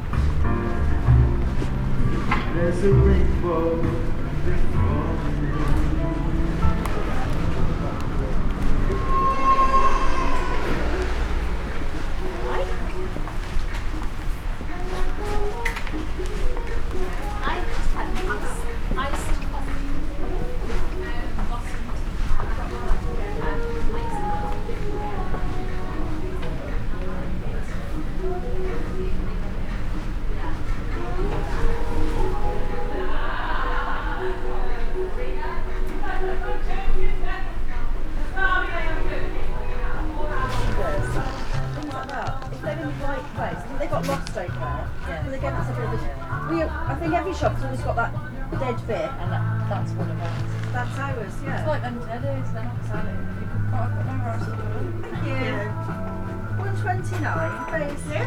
Changing sounds along the High Street, Worcester, UK - Shops-People
Recorded during a walk along the High Street shopping area with snatches of conversations, street entertainers and the changing ambient image as I visit locations and change direction.
England, United Kingdom, 4 July